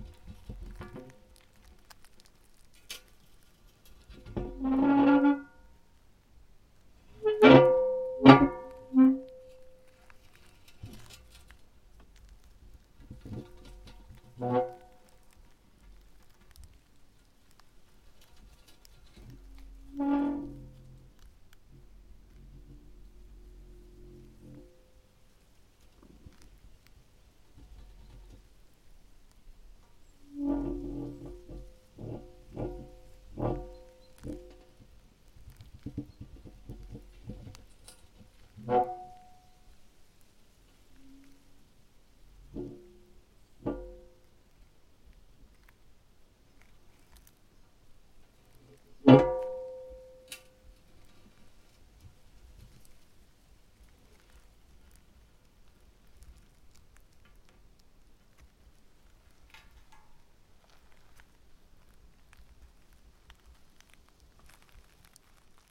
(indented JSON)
{
  "title": "Sasino, summerhouse at Malinowa Road, backyard - foot and cast-iron lid",
  "date": "2013-08-24 08:30:00",
  "description": "a short composition for a foot scraping concrete dust and a cast-iron lid of a water well.",
  "latitude": "54.76",
  "longitude": "17.74",
  "altitude": "23",
  "timezone": "Europe/Warsaw"
}